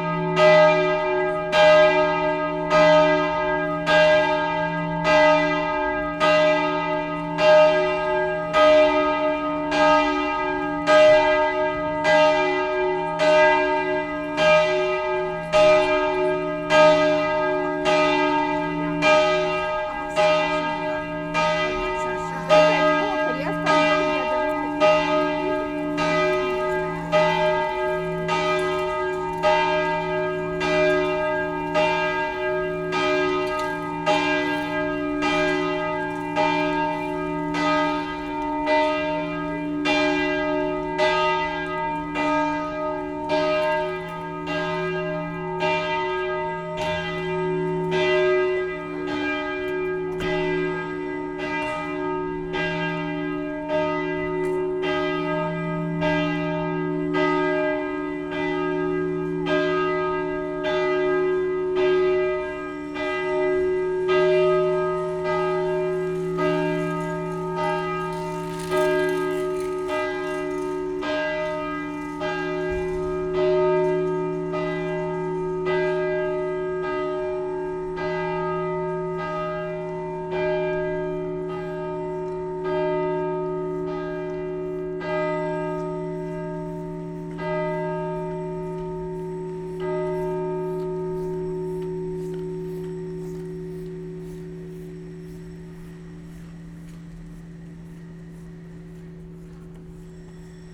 Ciril-Metodov Trg, Ljubljana, Slowenien - bells of St. Nikolaja cathedral
bells of St.Nicholas cathedral at noon, in the narrow streets around the church
(Sony PCM D50, DPA4060)